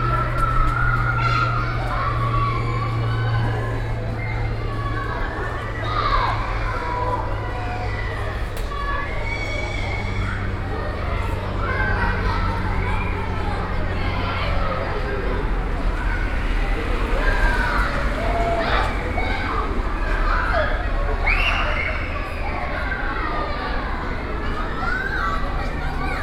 Brussels, Avenue Saint-Augustin, schoolyard